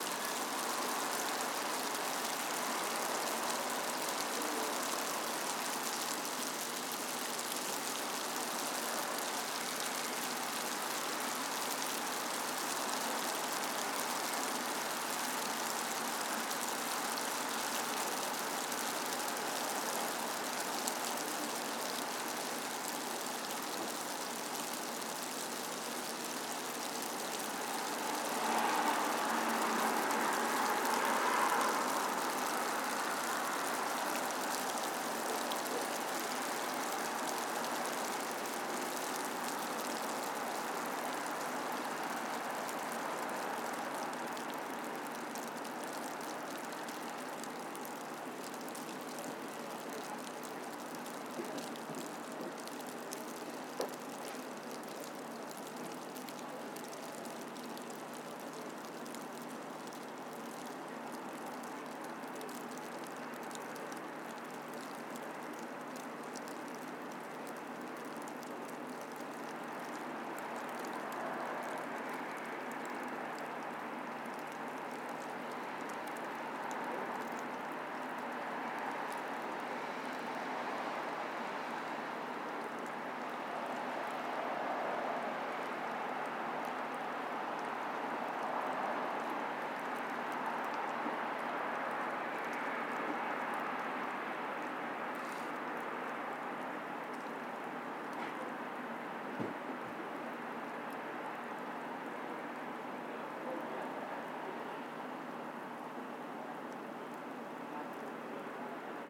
Pleasant St, Ithaca, NY, USA - Light hail
Light hail recorded on a back porch which ceases roughly 40-60 seconds in. Distant traffic ambience throughout.
Recorded with a Sennheiser ME 66